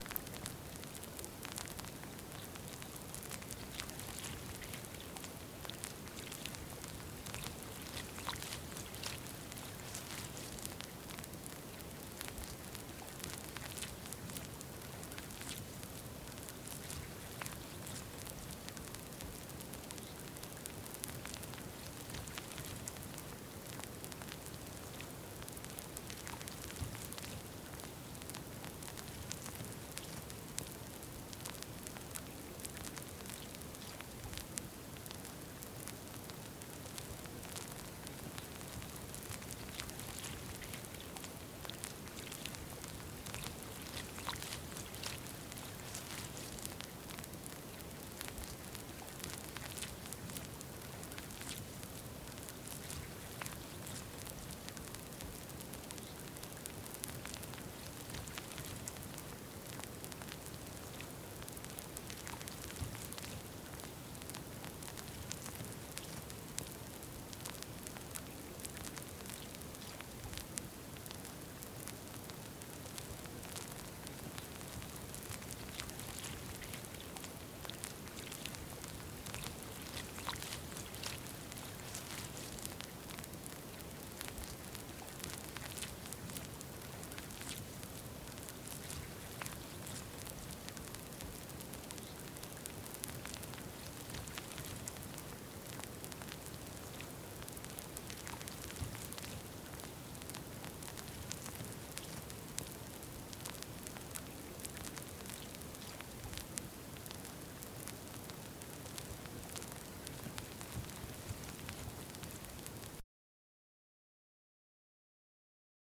Freixiosa, Miranda do Douro, Portugal. Mapa Sonoro do Rio Douro. Douro River Sound Map
Portugal, February 2014